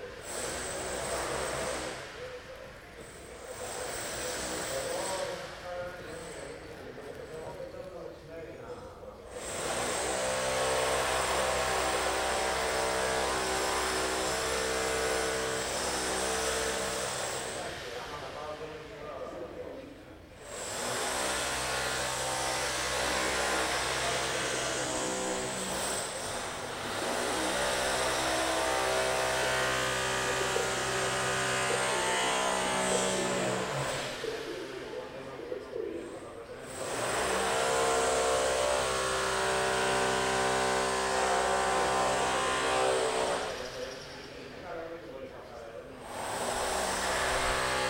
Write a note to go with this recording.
People talking. The sound of a drill creates a low-fi soundscape.